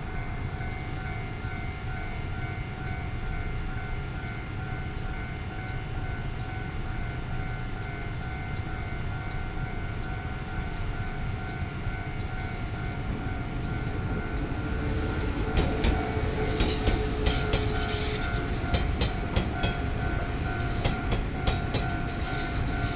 Beside railroad tracks, Traffic Sound, In the railway level crossing, Trains traveling through